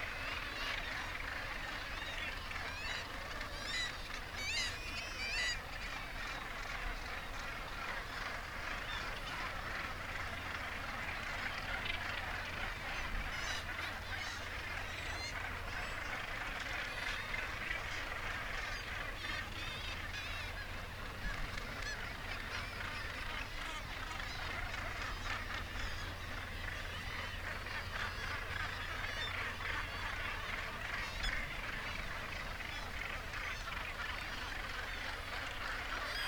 Bempton, UK - Gannet colony soundscape ...
Gannet colony soundscape ... RSPB Bempton Cliffs ... gannet calls and flight calls ... kittiwake calls ... open lavalier mics on T bar on fishing landing net pole ... warm ... sunny morning ...
22 July, 07:22